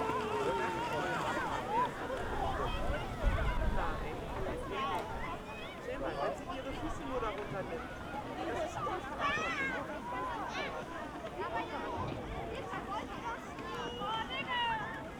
Görlitzer Park, Berlin, Deutschland - snow walking, playground, sledge riders
Berlin, Görlitzer Park, cold Winter Sunday afternoon, heavy snowing, walking into the park, a playground /w a small hill, many kids and parents riding sledges, Corona/Covid rules are paused...
(SD702, Audio Technica BP4025)